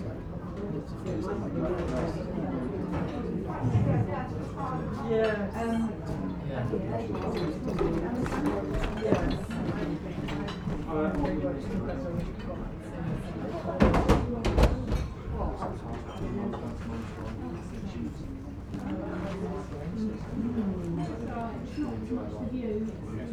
Typically polite english clientelle at lunch overlooking the River Alde and its reed beds. The mics are on the floor. Most of the people are on the left and the kitchen door is on the right.
MixPre 6 II with 2 Sennheiser MKH 8020s